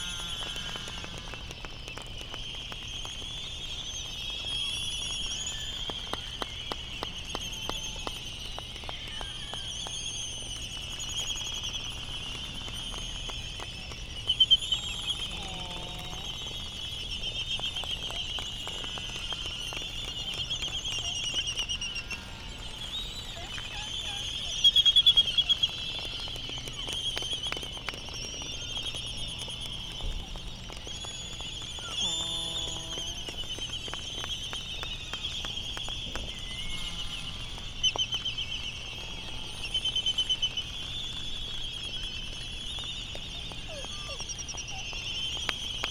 United States Minor Outlying Islands - Laysan albatross colony soundscape ...
Laysan albatross colony soundscape ... Sand Island ... Midway Atoll ... laysan calls and bill clapperings ... canary song ... background noise from buggies and voices ... a sunrise wake up call ... open lavalier mics ...